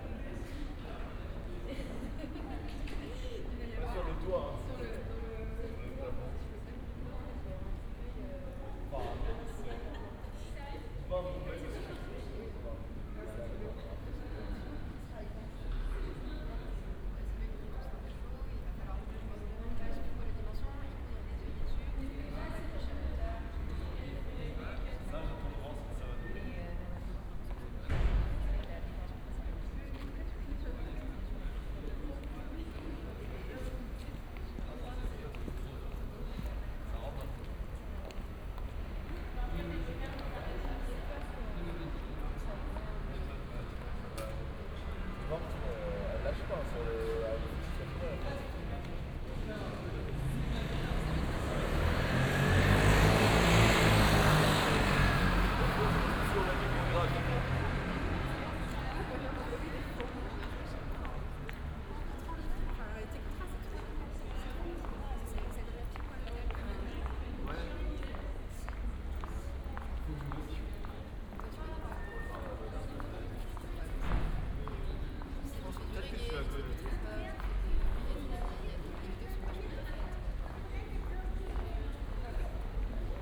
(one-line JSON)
{"title": "Place de l'Université, Aix-en-Provence - early evening ambience", "date": "2014-01-06 17:30:00", "description": "ambience at Place de l'Université on a Monday early evening\n(PCM D50, OKM2)", "latitude": "43.53", "longitude": "5.45", "altitude": "214", "timezone": "Europe/Paris"}